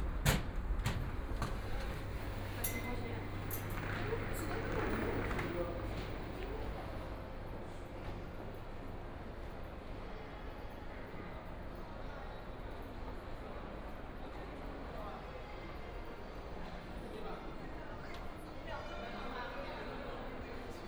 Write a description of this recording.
Sitting inside mall, Binaural recording, Zoom H6+ Soundman OKM II